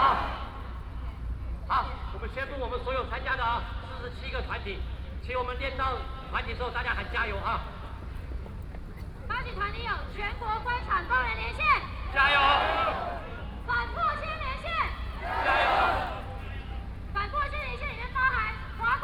National Dr. Sun Yat-sen Memorial Hall - Workers protest
Workers protest, Sony PCM D50 + Soundman OKM II